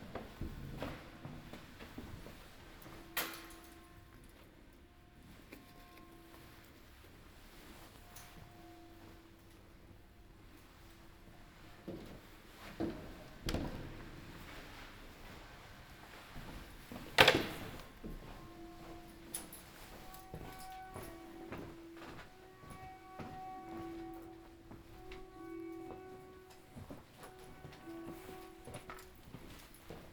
Ascolto il tuo cuore, città. I listen to your heart, city. Several chapters **SCROLL DOWN FOR ALL RECORDINGS** - Marché et gare aux temps du COVID19 Soundwalk
Chapter VII of Ascolto il tuo cuore, città. I listen to your heart, city
Saturday March 14th 2020. Crossing the open-air market of Piazza Madama Cristina, then Porta Nuova train station, Turin, and back. Four days after emergency disposition due to the epidemic of COVID19.
Start at 11:00 p.m. end at 11:44 p.m. duration of recording 43'57''
The entire path is associated with a synchronized GPS track recorded in the (kmz, kml, gpx) files downloadable here:
Torino, Piemonte, Italia